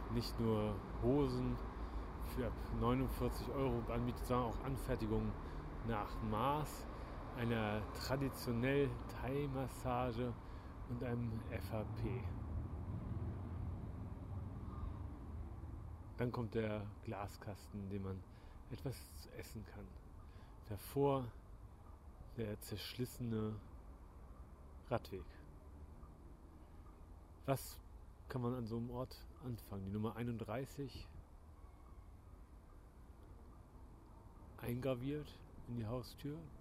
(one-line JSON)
{"title": "Berlin, Wedding, Prinzenallee", "date": "2011-03-27 16:50:00", "description": "Standing in front of some shops, describing them.", "latitude": "52.56", "longitude": "13.39", "altitude": "42", "timezone": "Europe/Berlin"}